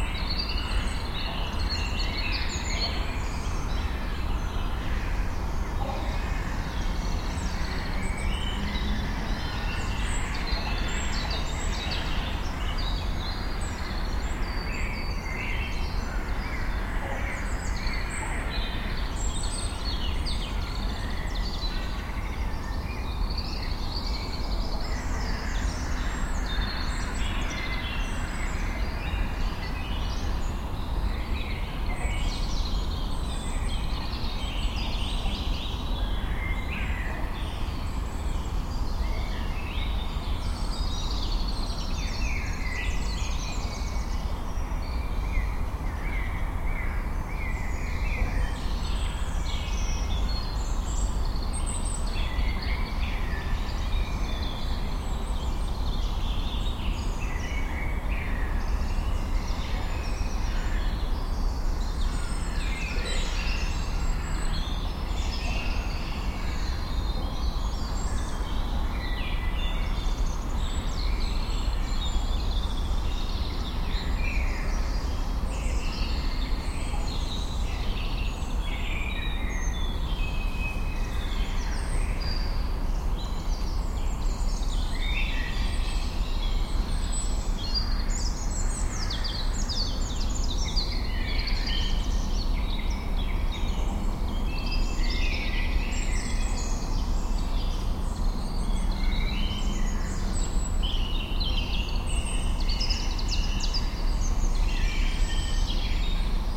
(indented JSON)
{
  "title": "Wik, Kiel, Deutschland - Forest near city",
  "date": "2017-03-28 19:00:00",
  "description": "Evening in the forest on the outskirts of Kiel: many birds, a helicopter, one barking dog, some very distant cars, some low frequency rumbling from ships on the nearby Kiel Canal and the omnipresent buzzing of the city and the traffic.\nZoom F4 recorder, two DPA 4060 as stereo couple",
  "latitude": "54.37",
  "longitude": "10.10",
  "altitude": "34",
  "timezone": "Europe/Berlin"
}